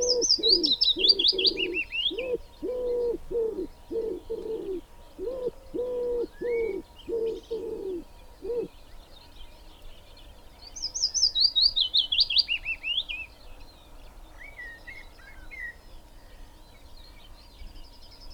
Willow warbler ... wood pigeon ... dpa 4060s to Zoom F6 ... lavaliers clipped to twigs ... bird calls ... song ... from ... yellowhammer ... pheasant ... wren ... skylark .. goldfinch ... magpie ... crow ...